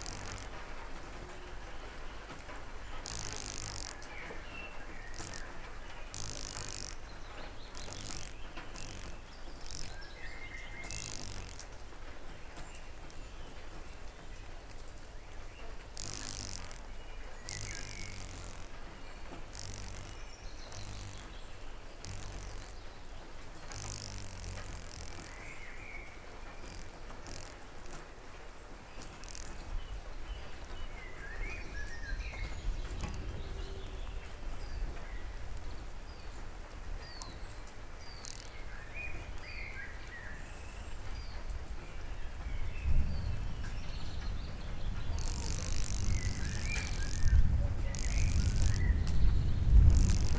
Sasino, summerhouse at Malinowa Road - wing
an insect beating its wing on a wooden plank. light rain and drops falling from roof and trees after a downpour. (roland r-07)